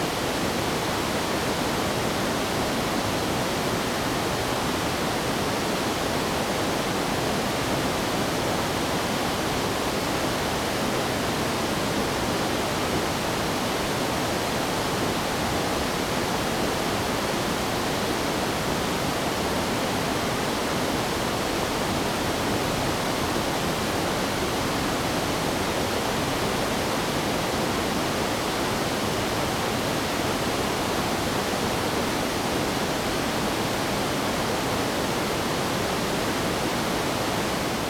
Pubu, 烏來里, Wulai Dist., New Taipei City - Waterfalls and rivers
Facing the waterfall, Traffic sound, Birds call, Waterfalls and rivers
Zoom H2n MS+ XY
Wulai District, New Taipei City, Taiwan, 5 December 2016